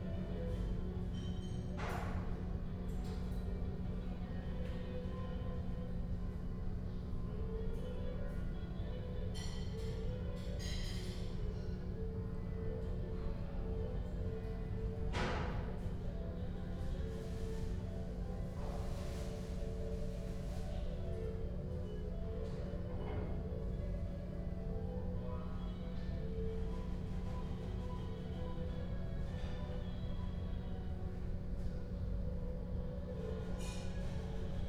inner yard window, Piazza Cornelia Romana, Trieste, Italy - sounds around noon
sounds from a kitchen, classical music from a radio